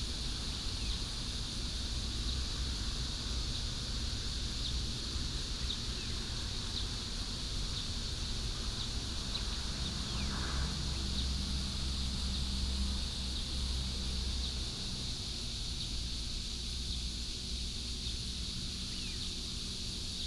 Next to the baseball field, Cicada cry, birds sound, traffic sound